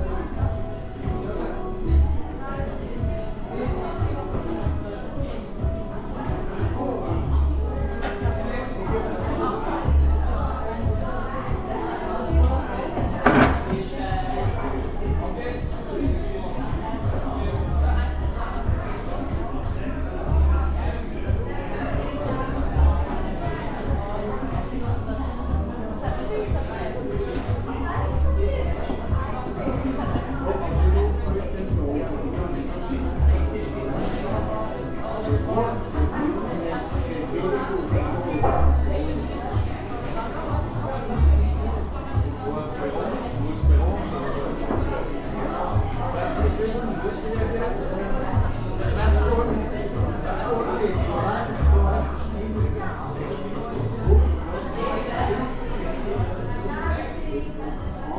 Zürich West, Schweiz - 4. Akt
4. Akt, Heinrichstr. 262, 8005 Zürich